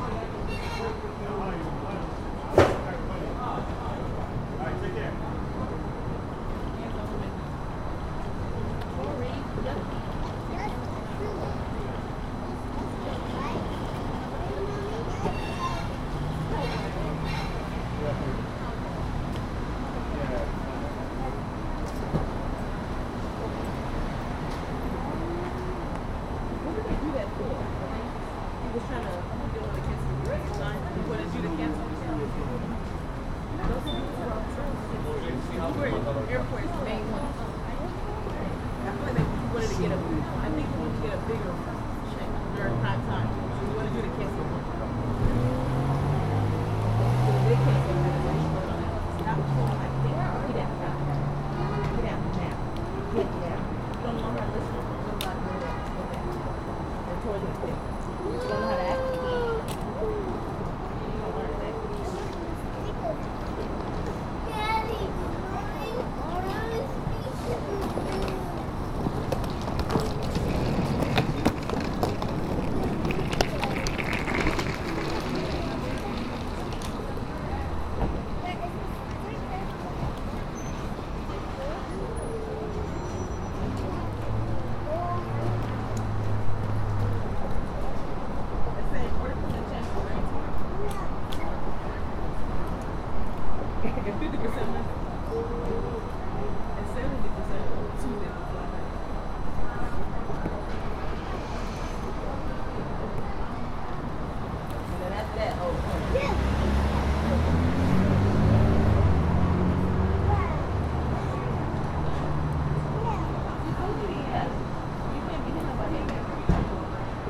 Terminal B, Newark, NJ, USA - Airport Pickup Zone
Waiting for a ride back to a family member's house after a missed flight. Every part of the Newark airport was packed due to labor day weekend, including the pickup zone. People are heard walking by with their luggage as cars move from left to right in front of the recorder.
[Tascam Dr-100mkiii onboard uni mics]
3 September 2022, 16:58